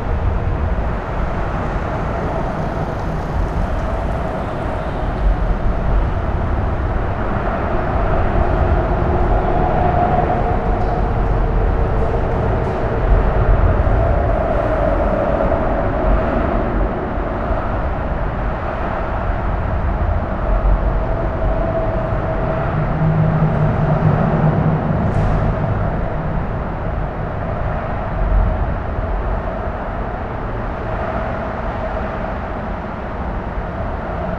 berlin, bundesplatz: unter autobahnbrücke - the city, the country & me: under motorway bridge
strange and unfriendly place: unlighted parking under motorway bridge
the city, the country & me: april 10, 2013